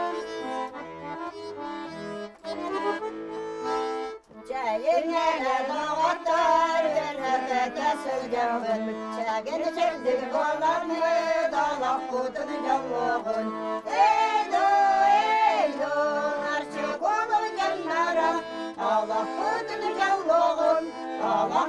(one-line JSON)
{"title": "Оймяконский у., Респ. Саха (Якутия), Россия - Babushki v Oymyakone", "date": "2014-04-10 16:04:00", "description": "Spring in Yakutiya. Just -15C/-20C. Some grandmoms travelling by UAZ-452 from Tomtor village to next village Oymyakon – one of the coldest villages in the world. On the way, they sang songs. When we arrived they met their friends – they still sang songs. It was spring holyday.", "latitude": "63.46", "longitude": "142.79", "altitude": "690", "timezone": "GMT+1"}